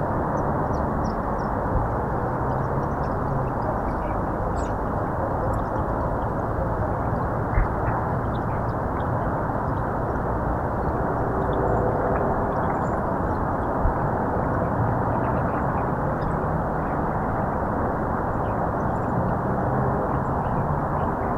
вулиця Ємельянова, Костянтинівка, Донецька область, Украина - Звуки у реки
Морозная свежесть и звуки атмосферы на берегу реки в промышленной зоне